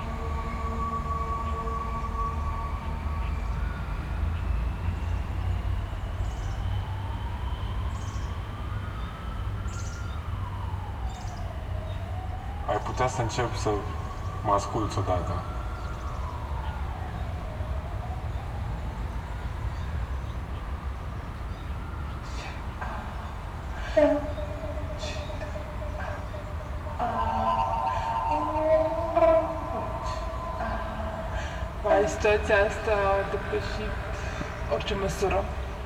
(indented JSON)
{
  "title": "Gruia, Klausenburg, Rumänien - Cluj, Fortress Hill project, emotion tube 1",
  "date": "2014-05-27 09:40:00",
  "description": "At the temporary sound park exhibition with installation works of students as part of the Fortress Hill project. Here the sound of emotions and thoughts created with the students during the workshop and then arranged for the installation coming out of one tube at the park. In the background traffic and city noise.\nSoundmap Fortress Hill//: Cetatuia - topographic field recordings, sound art installations and social ambiences",
  "latitude": "46.77",
  "longitude": "23.58",
  "altitude": "375",
  "timezone": "Europe/Bucharest"
}